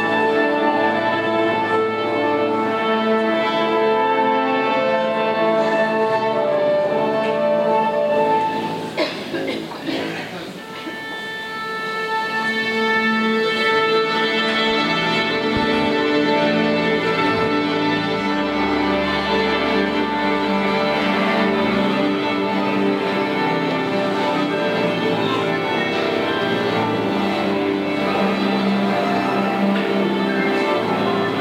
Auditorium Rainier III, Monaco - Orchestra tune up
The Monte-Carlo Philharmonic Orchestra tune up before the start of a show.
Recorded on an Olympus VN8600 internal mics.